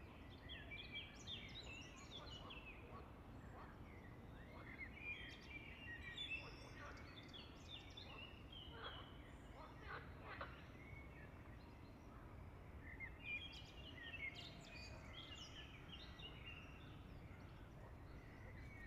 {
  "title": "Zürich, Wynegg, Schweiz - Landambi",
  "date": "2005-05-28 20:53:00",
  "description": "Vögel, Frösche, Passage Helikopter, 1. Glockenschlag Kirche Erlöser, 2. Glockenschlag Kirche Neumünster.",
  "latitude": "47.36",
  "longitude": "8.56",
  "altitude": "451",
  "timezone": "Europe/Zurich"
}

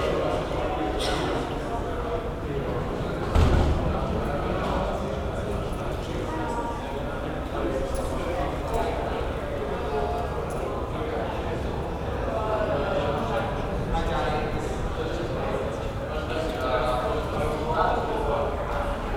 {
  "title": "Goethe Institut students in Mitte",
  "date": "2010-09-22 14:20:00",
  "description": "students in the courtyard of the Goethe Institut",
  "latitude": "52.52",
  "longitude": "13.41",
  "altitude": "44",
  "timezone": "Europe/Berlin"
}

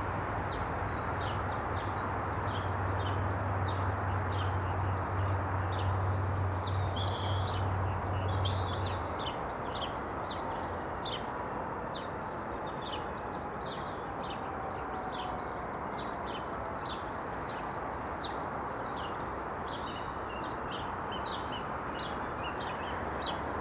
{
  "title": "Contención Island Day 56 outer southeast - Walking to the sounds of Contención Island Day 56 Monday March 1st",
  "date": "2021-03-01 06:42:00",
  "description": "The Drive High Street Little Moor Highbury Brentwood Avenue Fairfield Road\nThe dawn-lit moon\nhangs\nin the cold of the frosted dawn\nMotorway sound is unrelenting\nSparrows chat and robin sings\ninside the traffic’s seething",
  "latitude": "54.99",
  "longitude": "-1.61",
  "altitude": "61",
  "timezone": "Europe/London"
}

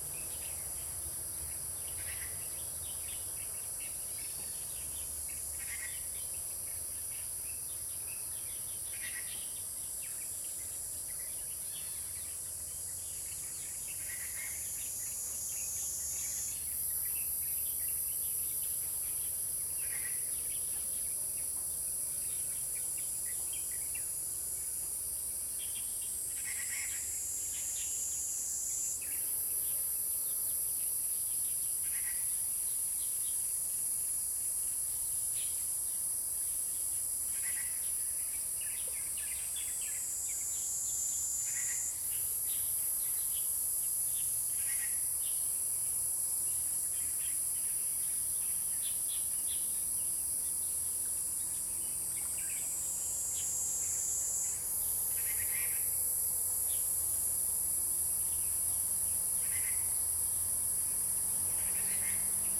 {
  "title": "組合屋生態池, 埔里鎮桃米里 - Bird calls",
  "date": "2015-08-11 07:12:00",
  "description": "Birds singing, Next to the ecological pool\nZoom H2n MS+XY",
  "latitude": "23.94",
  "longitude": "120.93",
  "altitude": "467",
  "timezone": "Asia/Taipei"
}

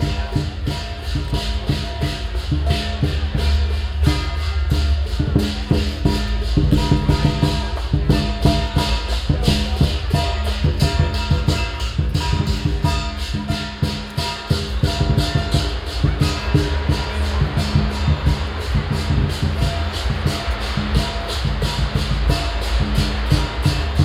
{
  "title": "Zuidwal, Den Haag, Nederland - Dragon dance - Chinese New Year",
  "date": "2017-01-28 14:15:00",
  "description": "On January 28th, 2017 began the Chinese New Year, the year of the Rooster. Which is always celebrated in The Hague's Chinatown.\nBinaural Recording",
  "latitude": "52.08",
  "longitude": "4.31",
  "altitude": "7",
  "timezone": "GMT+1"
}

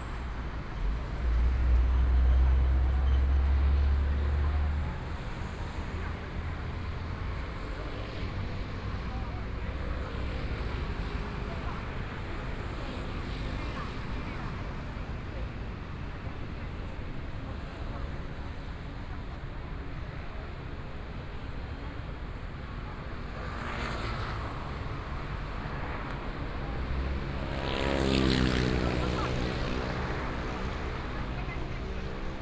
{
  "title": "Chang'an E. Rd., Zhongshan Dist. - walking on the Road",
  "date": "2014-02-08 14:31:00",
  "description": "walking on the Road, Traffic Sound, Motorcycle Sound, Pedestrians on the road, Binaural recordings, Zoom H4n+ Soundman OKM II",
  "latitude": "25.05",
  "longitude": "121.53",
  "timezone": "Asia/Taipei"
}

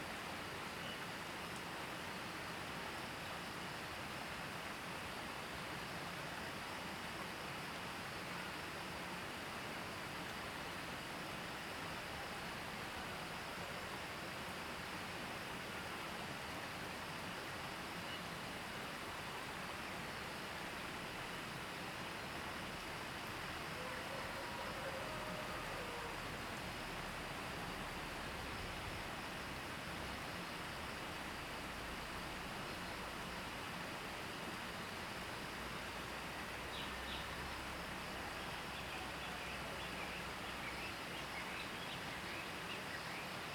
{
  "title": "茅埔坑溪, Nantou County - Stream",
  "date": "2015-04-30 06:04:00",
  "description": "Bird calls, Stream sound, Chicken sounds\nZoom H2n MS+XY",
  "latitude": "23.94",
  "longitude": "120.94",
  "altitude": "470",
  "timezone": "Asia/Taipei"
}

